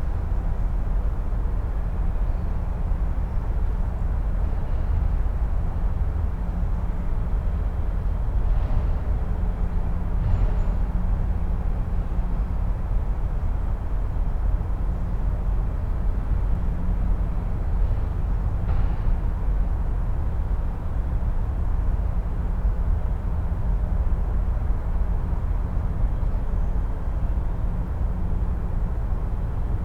Piatkowo district, Marysienki alotments - morning chirp
a bird chirping in the morning before sunrise. dense noise of the waking city. mother with kids passing by. (roland r-07)